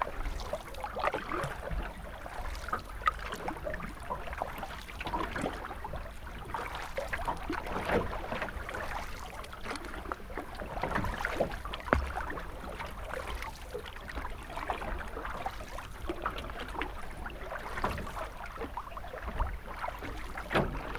Stora Le, Årjäng, Sweden - Canoeing on a swedish lake, Midsommar
Canoeing around midsommar on a swedish lake, Olympus LS-14
22 June 2017, 14:00